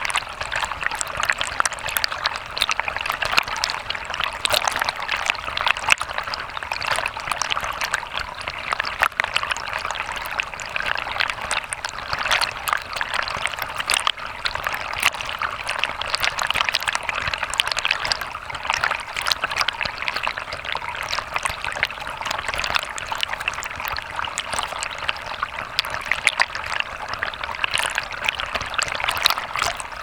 Lihuania, Utena, man-made waterfall(hydrophone recording)
heres artificial waterfall near man made dam...hydrophone at 1 meter depth